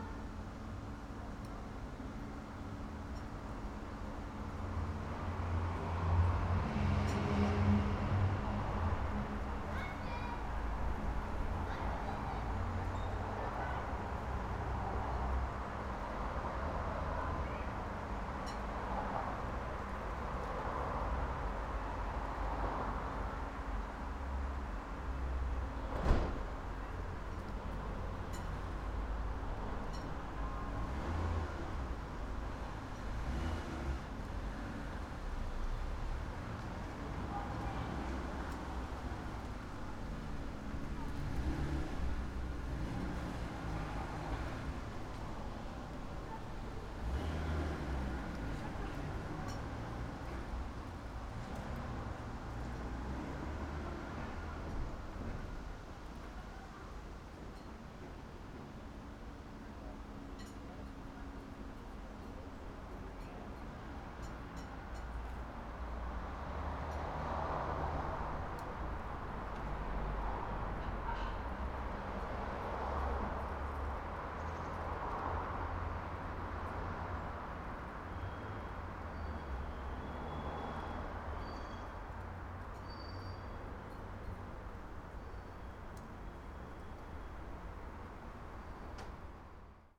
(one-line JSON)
{"title": "Köln, Richard-Wagner-Str. - living situation", "date": "2010-10-10 14:10:00", "description": "backyard, slightly strange living situation, inbetween designed appartments and social housing", "latitude": "50.94", "longitude": "6.93", "altitude": "56", "timezone": "Europe/Berlin"}